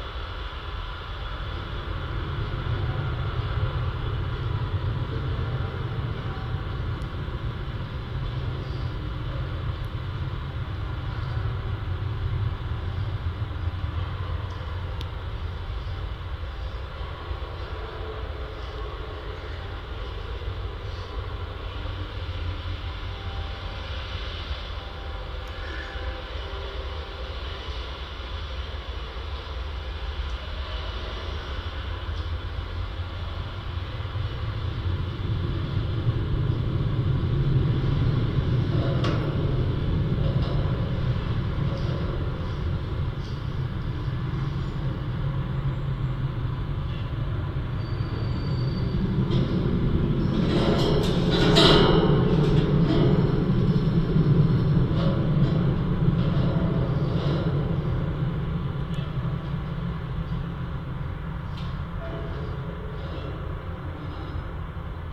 A quadruple contact microphone recording of a construction site fence. Wind and traffic ambience reverberating and resonating.